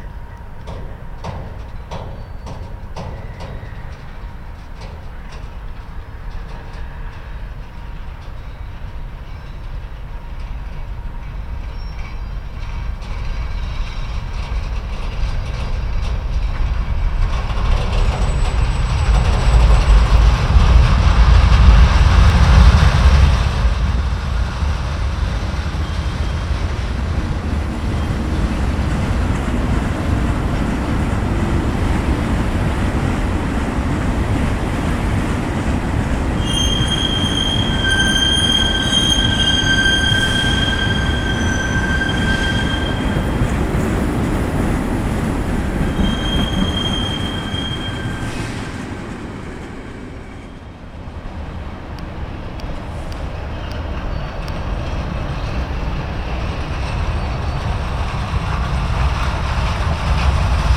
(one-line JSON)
{"title": "Ostrava-Mariánské Hory a Hulváky, Česká republika - Oni si hrajou", "date": "2013-11-08 00:17:00", "description": "On the cargo station with a friend, but alone in a mysterious place.", "latitude": "49.84", "longitude": "18.24", "altitude": "209", "timezone": "Europe/Prague"}